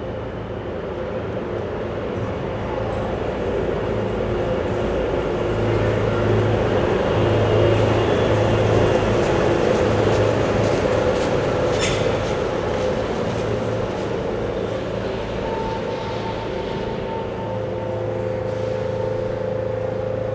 Bus Tunnel - Bus Tunnel #1
Running underneath downtown Seattle is a commuter bus tunnel, allowing Metro to bypass downtown traffic. The 1.3 mile $455 million tunnel is finished entirely in expensive Italian marble, thanks to a cozy arrangement between the contractors and city managers. It presents a reverberant sound portrait of mass transit at work.
Major elements:
* Electric busses coming and going (some switching to diesel on the way out)
* Commuters transferring on and off and between busses
* Elevator (with bell) to street level
* Loose manhole cover that everybody seems to step on
Washington, United States of America, 25 January 1999, ~12:00